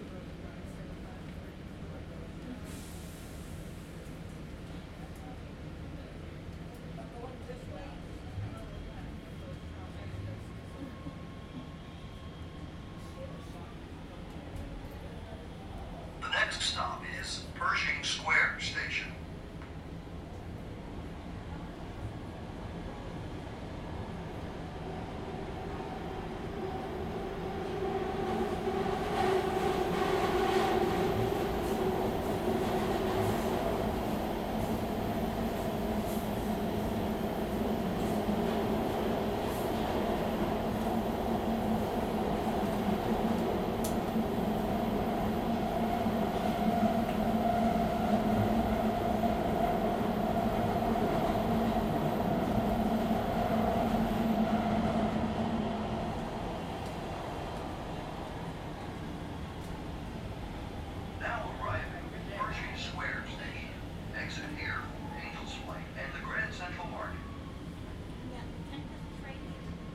Civic Center / Little Tokyo, Los Angeles, Kalifornien, USA - LA - underground train ride
LA - underground train ride, red line, arriving at union station, few passengers, announcements;